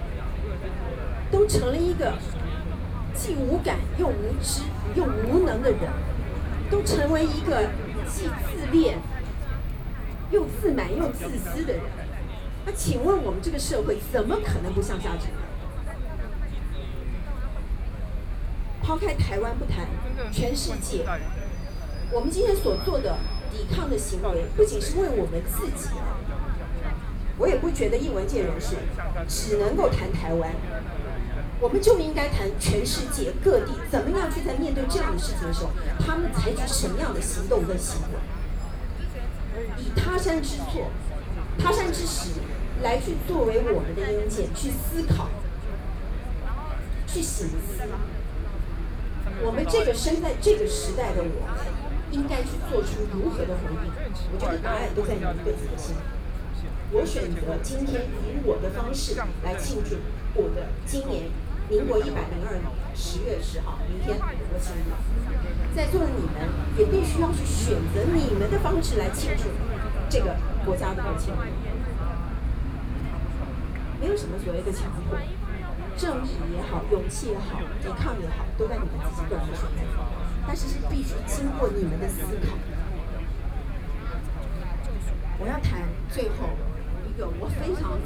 Zhongshan S. Rd., Taipei City - Speech
Speech, writers are protesting government, Binaural recordings, Sony PCM D50+ Soundman OKM II
Taipei City, Taiwan, 9 October 2013